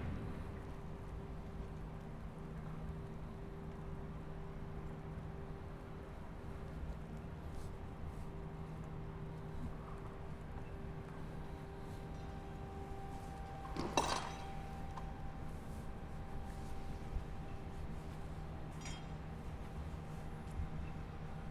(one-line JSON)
{"title": "Papierweg, Amsterdam, Nederland - Wasted Sound ICL", "date": "2019-11-06 13:58:00", "description": "Wasted Sound\n‘‘With the wasted sounds it is discussable if the sound is useful or not. It might in most cases not be useful. But what we have to consider is that the sound is often a side product of a very useful thing, which proves again that you have to have waste to be productive.’’", "latitude": "52.40", "longitude": "4.87", "altitude": "2", "timezone": "Europe/Amsterdam"}